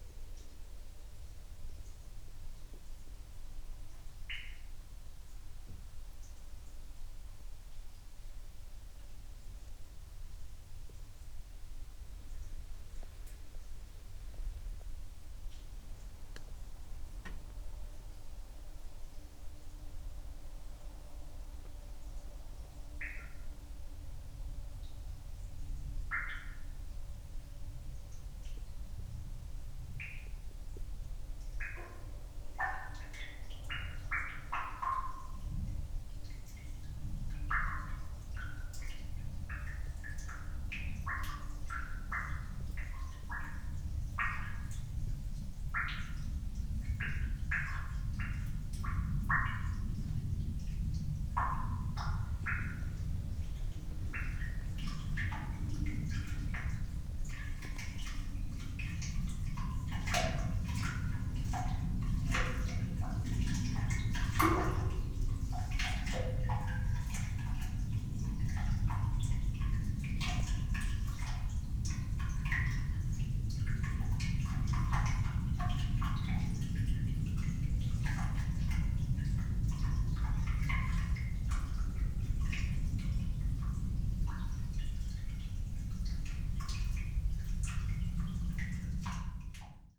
Netzow, Templin, Deutschland - night ambience, effluent, water dripping
village Netzow/ Uckermark at night, water dripping in an effluent, distant dog barking, a plane
(Sony PCM D50, Primo EM172)
Templin, Germany, 19 December